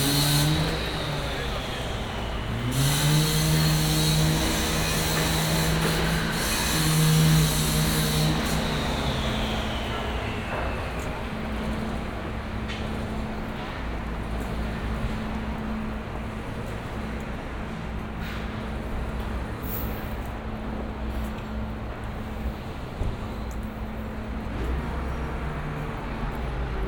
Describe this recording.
equipment used: Olympus LS-10 & OKM Binaurals, Building construction in Chinatown... Walking a block up St-Laurent from Avenue Viger and arriving at a restaurant.